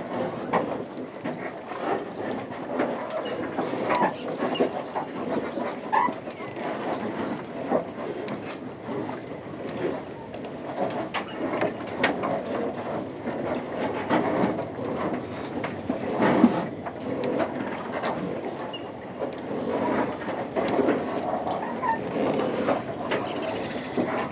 Saint-Martin-de-Ré, France - The marina
The very soft sound of the marina during a quiet low tide, on a peaceful and shiny sunday morning.